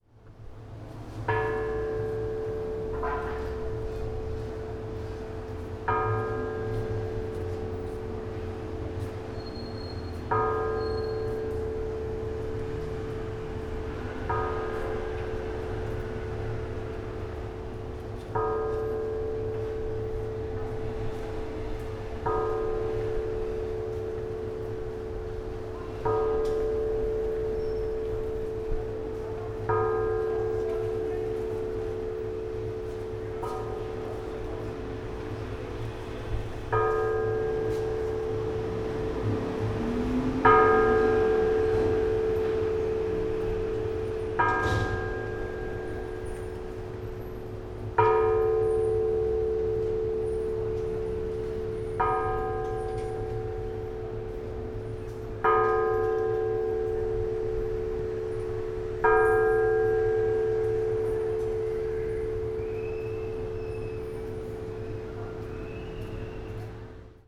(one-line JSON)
{"title": "Heraklion, Downtown, near Agios Minas Cathedral - bell", "date": "2012-10-28 11:20:00", "description": "striking a bell standing on a pedestal. recorder inside the bell", "latitude": "35.34", "longitude": "25.13", "altitude": "32", "timezone": "Europe/Athens"}